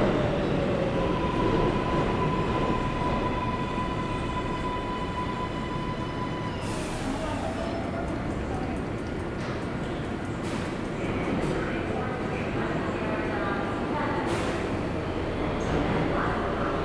Str Subway Station, New York, NY, Verenigde Staten - 42nd Str Subway Station
Zoom H4n Pro